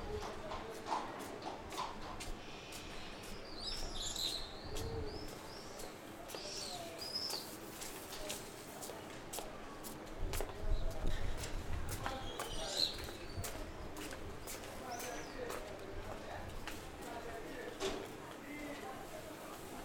Asher St, Acre, Israel - Alley in Acre
Alley, Horse, Tourist, Hebrew, Arabic, English, birds
3 May 2018